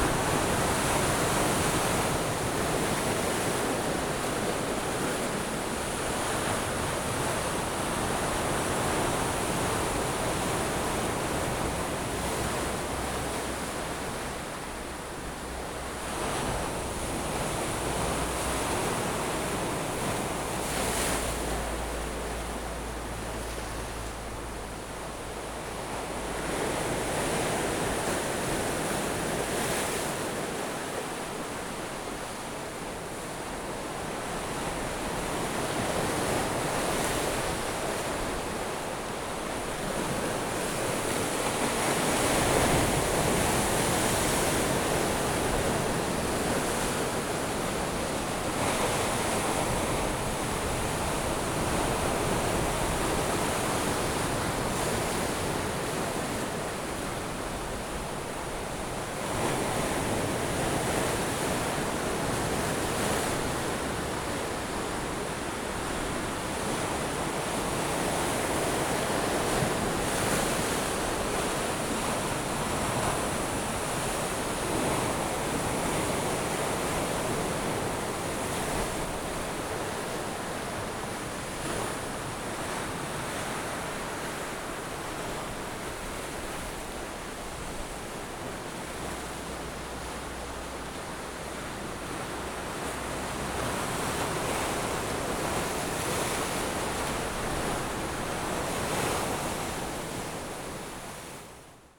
石城魚港, Toucheng Township - sound of the waves
On the coast, Sound of the waves
Zoom H6 MS mic+ Rode NT4
Yilan County, Taiwan, 21 July